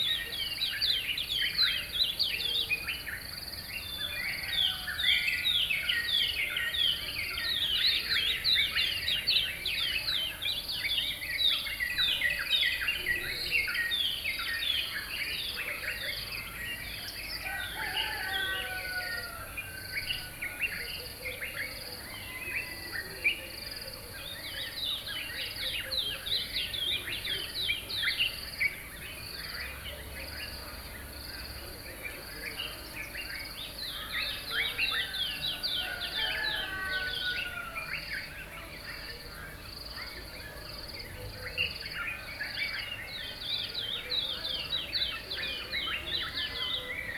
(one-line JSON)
{"title": "Malindela, Bulawayo, Zimbabwe - Dawn chorus", "date": "2014-01-26 05:31:00", "description": "Malindela dawn chorus", "latitude": "-20.18", "longitude": "28.60", "altitude": "1367", "timezone": "Africa/Harare"}